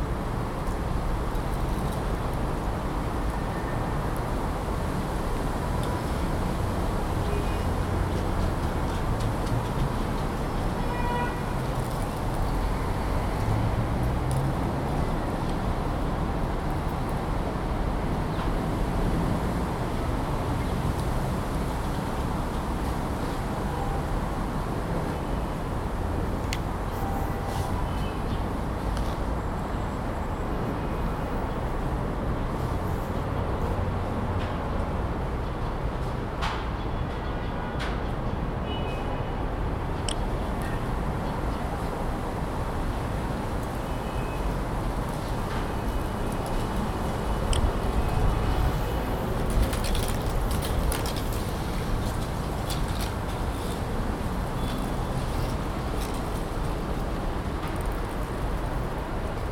وادي قدوم 77 - Damascus Gate\Musrara
Walking from Damascus Gate to Musrara